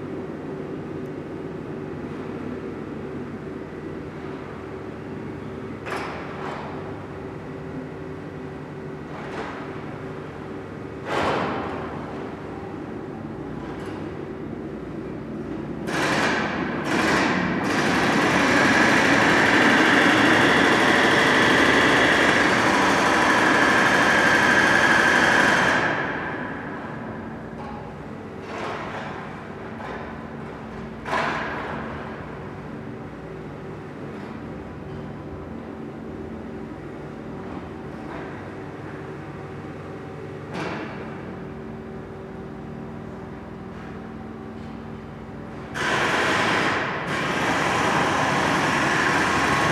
Antoniusschacht, Zürich, Schweiz - Tunnelbau S-Bahn
Menzi Muck, Totale
1987
9 May, 16:36